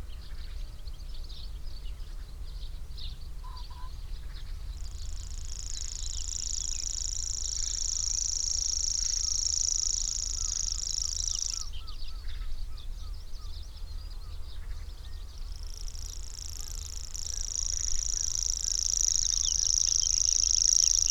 Cliff Ln, Bridlington, UK - grasshopper warbler ... in gannet territory ...
Grasshopper warbler ... in gannet territory ... mics in a SASS ... bird calls ... song from ... blackcap ... whitethroat ... pied wagtail ... gannet ... kittiwake ... tree sparrow ... wren ... song thrush ... wood pigeon ... jackdaw ... some background noise ...
27 June 2018